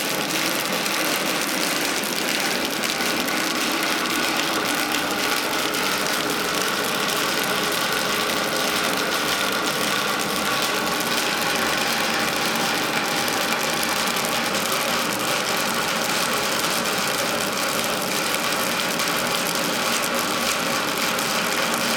Villard-sur-Doron, France - Fonte des neiges
Chute d'eau de fonte des neiges sur une toiture en tôle d'acier, au restaurant du mont Bisanne.